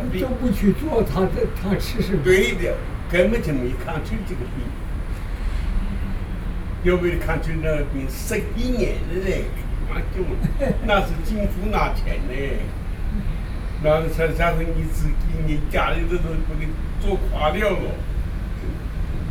婦聯公園, Taipei City, Taiwan - Two elderly
Songshan District, Taipei City, Taiwan